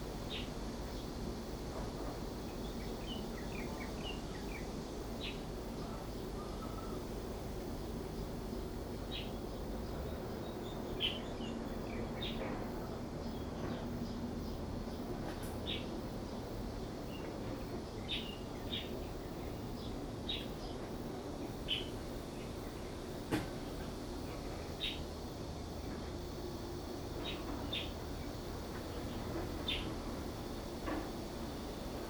福正宮, 大溪區Taoyuan City - wind and bird
Small temple, wind, bird, Construction sound
Zoom H2n MS+XY
8 August 2017, 16:46